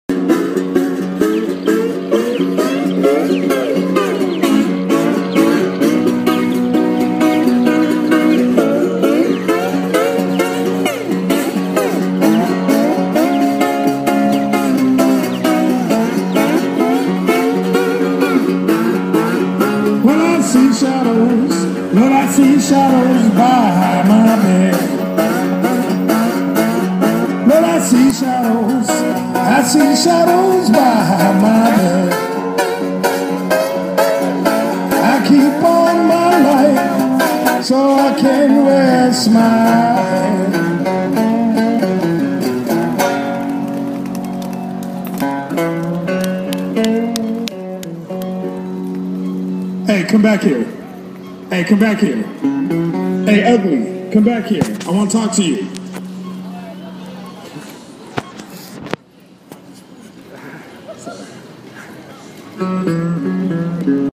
Downtown Berkeley, Berkeley, California, USA - "Come back here… hey ugly"
With sounds of traffic and crosswalks in the background, a street musician wrapping up a song is disturbed by passersby.
28 August